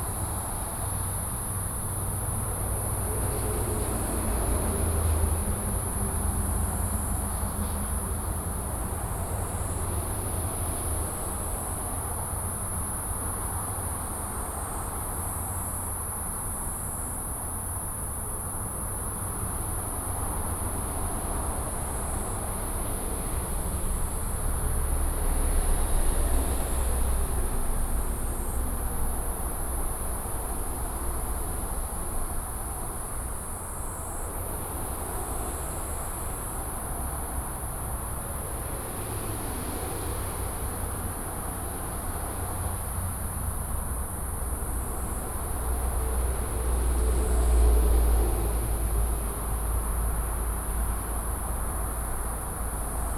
中山高速公路, Hukou Township - Insects and traffic sound
Insects and traffic sound, Next to the highway